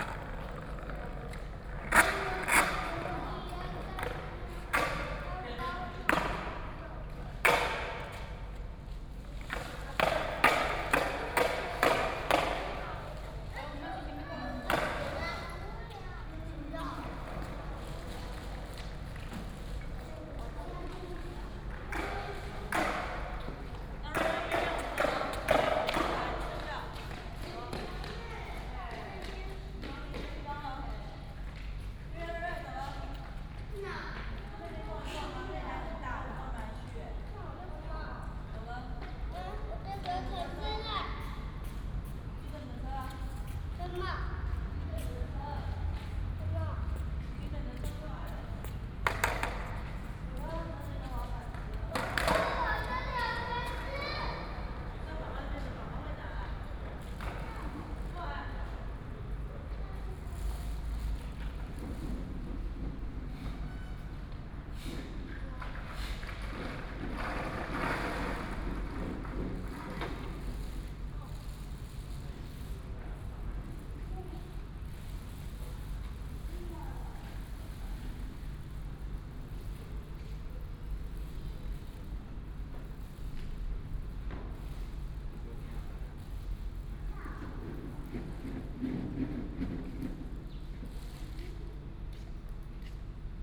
Transformation of the old paper mill, Child, skateboard, Traffic sound, Binaural recordings, Sony PCM D100+ Soundman OKM II
Wujie Township, Yilan County, Taiwan, 6 November 2017